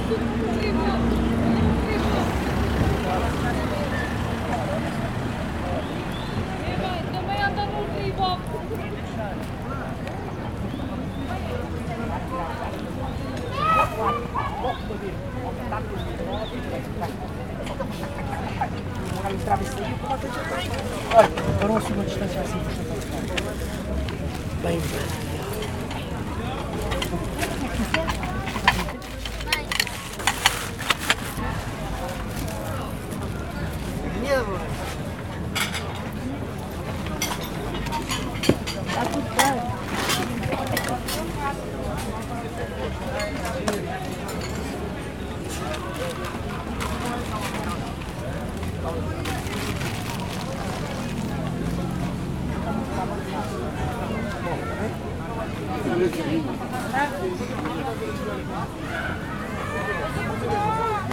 Lisbonne, Portugal - flea market
flea market that takes place every Tuesday and Saturday in the Campo de Santa Clara (Alfama)
Lisboa, Portugal, 20 June 2015, 15:35